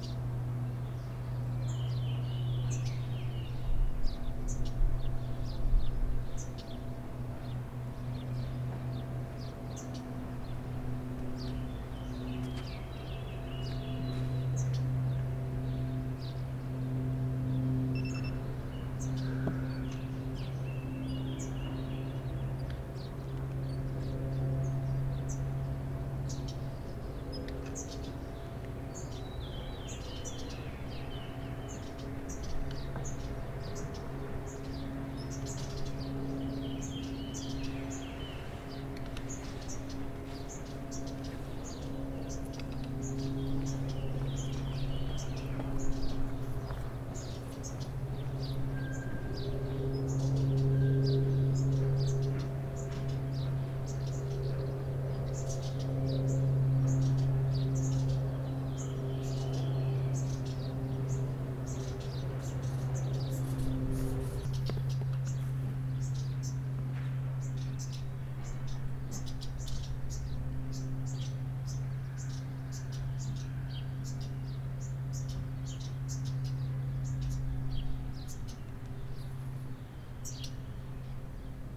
Jeunes rouges-queues, sortis du nid nourris par leur mère.